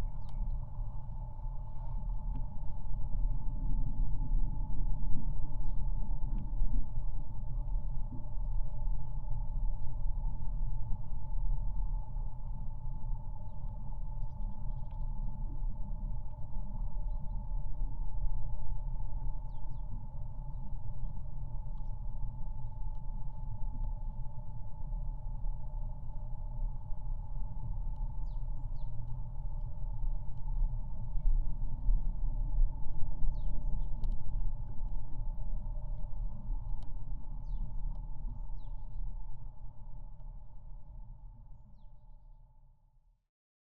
two-three days with minus degree temperature and there is tiny ice on riverside. contact microphones discover the drone